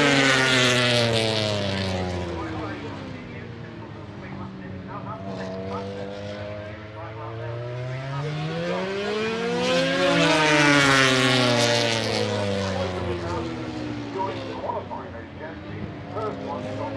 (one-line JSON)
{"title": "Derby, UK - british motorcycle grand prix 2007 ... motogp warmup ...", "date": "2007-06-24 09:30:00", "description": "british motorcycle grand prix 2007 ... motogp warm up ... one point stereo mic to minidisk ...", "latitude": "52.83", "longitude": "-1.38", "altitude": "96", "timezone": "Europe/London"}